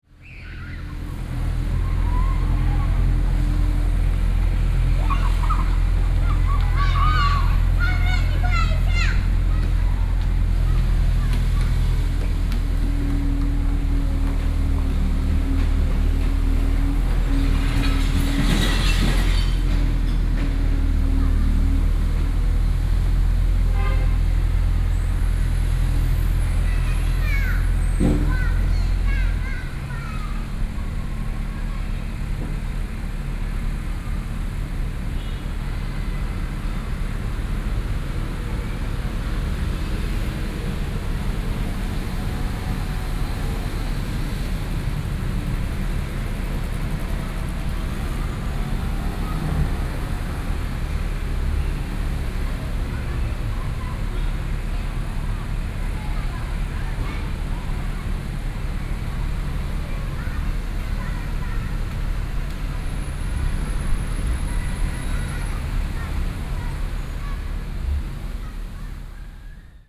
鳳雛公園, Sec., Dunhua S. Rd. - in the Park
Children in playground, Traffic Sound, Construction Sound, in the Park
Sony PCM D50+ Soundman OKM II
Taipei City, Taiwan, 31 May 2012